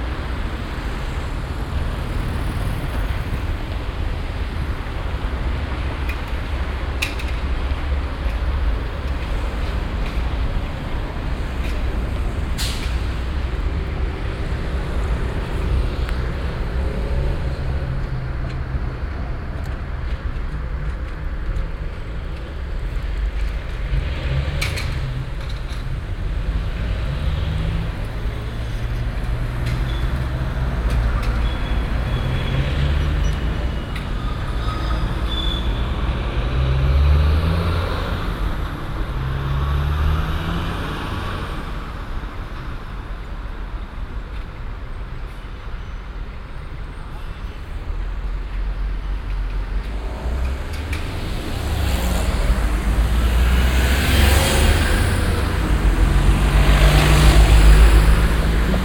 cologne, barbarossaplatz, verkehrsabfluss luxemburgerstrasse
strassen- und bahnverkehr am stärksten befahrenen platz von köln - aufnahme: morgens
soundmap nrw:
barbarossaplatz, luxermburgerstrasse, 29 September 2008, 19:35